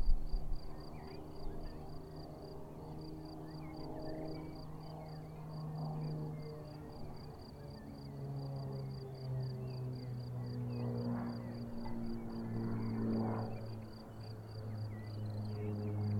Herrlicher Blick in die Große Ebene; Zirpen der Grillen; Motorenlärm eines Kleinflugzeugs
7 May, 15:36